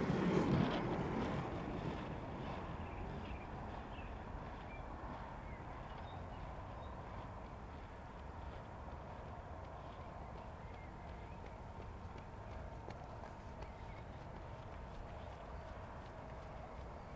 Toužimská, Praha-Letňany, Česko - Roller-skaters in forest park Letňany
Summer evening in a park. Joggers and roller skaters, crickets and birds, cars in the background.
Zoom H2n, 2CH, handheld.